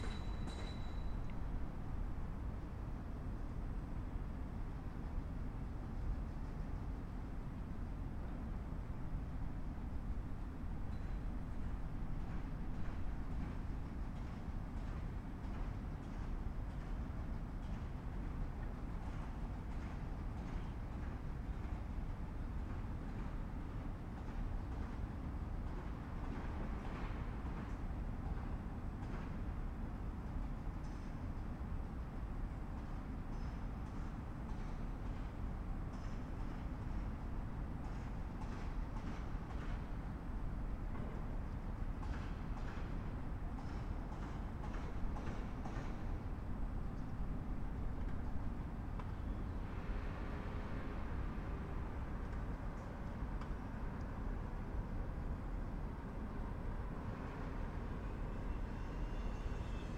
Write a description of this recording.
one minute for this corner - ob železnici 30